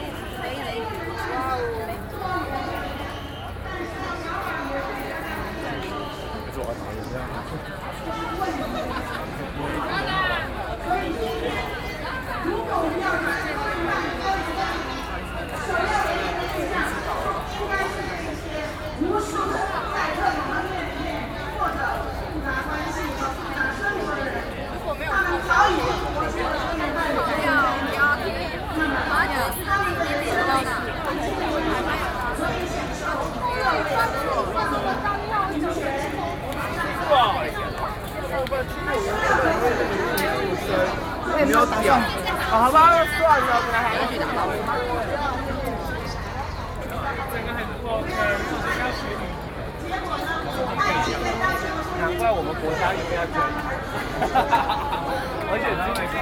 Ketagalan Boulevard, Taipei - Taiwan LGBT Pride
27 October, 17:09